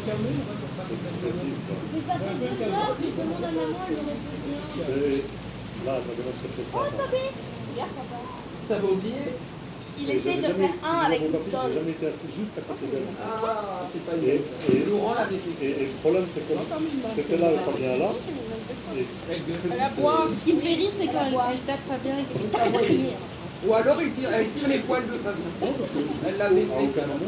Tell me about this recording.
With the very good weather, people are eating in the garden. Recording of the garden ambiance from the road.